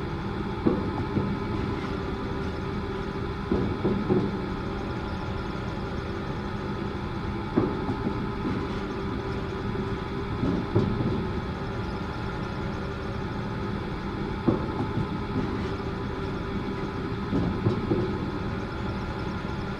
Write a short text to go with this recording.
Désert du Bahrain - sur la route du "Three of Life", Extraction sur le champ pétrolifère.